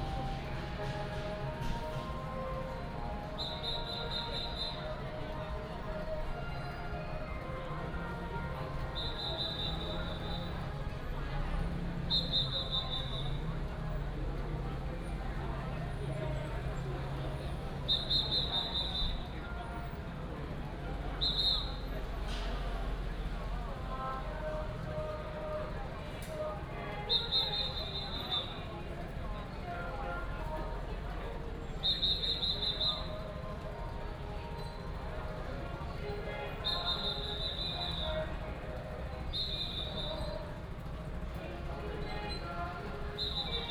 Sanmin Rd., Baozhong Township - Baishatun Matsu Pilgrimage Procession
Firecrackers and fireworks, Many people gathered at the intersection, Matsu Pilgrimage Procession
Baozhong Township, Yunlin County, Taiwan